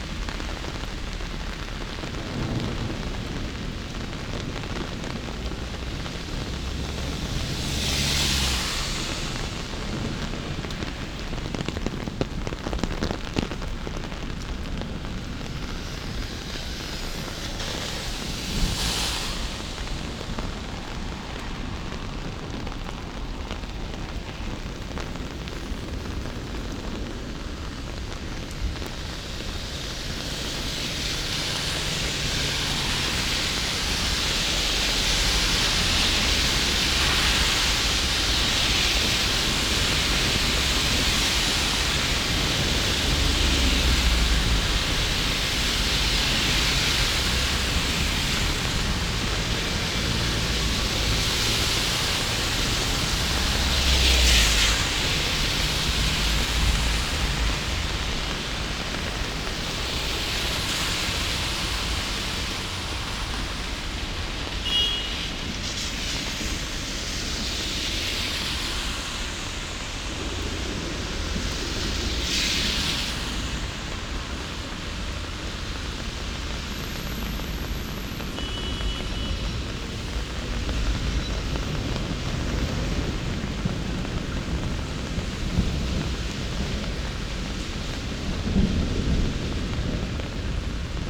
Ascolto il tuo cuore, città. I listen to your heart, city. **Several chapters SCROLL DOWN for all recordings ** - I’m walking in the rain, Monday again, in the time of COVID19 Soundwalk
"I’m walking in the rain, Monday again, in the time of COVID19" Soundwalk
Chapter CI of Ascolto il tuo cuore, città. I listen to your heart, city
Monday, June 8th 2020. San Salvario district Turin, walking to Corso Vittorio Emanuele II and back, ninety days after (but day thirty-six of Phase II and day twenty-three of Phase IIB and day seventeen of Phase IIC) of emergency disposition due to the epidemic of COVID19.
Start at 3:50 p.m. end at 4:09 p.m. duration of recording 19’11”
As binaural recording is suggested headphones listening.
The entire path is associated with a synchronized GPS track recorded in the (kmz, kml, gpx) files downloadable here:
go to Chapter LI, Monday April 20th 2020
Piemonte, Italia, 8 June